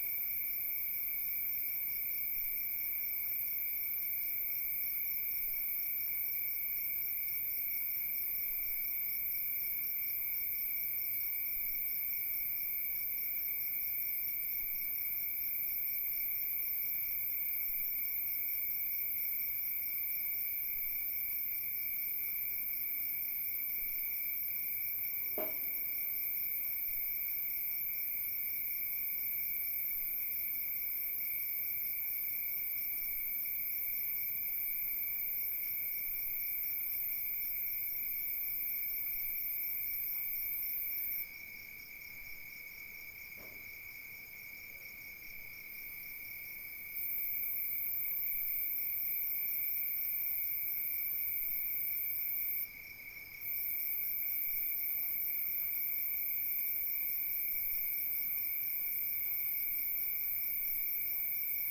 CILAOS 40 route du Bras des Étangs - 20190122 205302
Chaude nuit d'été, les chiens se sont tenus à peu près tranquille c'est pas souvent que ça arrive. Évité de mettre le micro trop près de l'herbe, mais sur le balcon de la maison, car sinon les sauterelle conocéphales saturent l'enregistrement.
Ce mois de janvier est particulièrement chaud. Il fait 17° à 1400m et 20° à 1100m (la nuit)
fichier de 40mn (1h ne passe pas) recadré avec audacity 320 kb/s
Prise de son ZoomH4N niveau 92
Réunion, 22 January 2019, 20:53